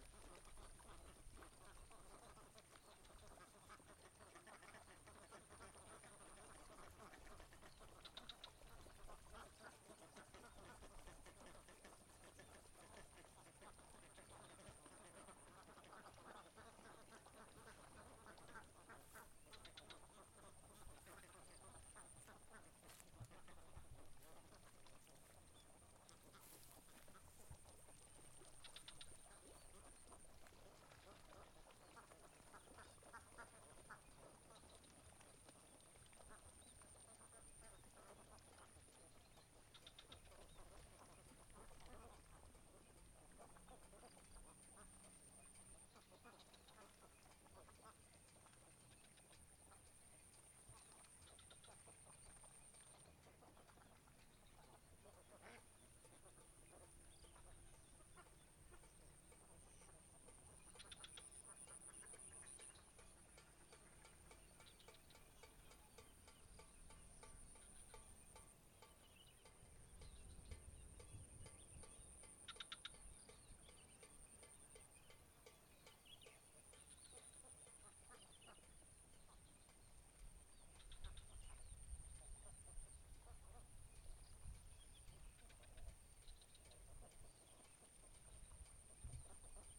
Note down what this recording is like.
A recording of duck and bird chatter with sounds of cicadas and rattle used to chase away birds in the background taken on a rice field near Omah Apik.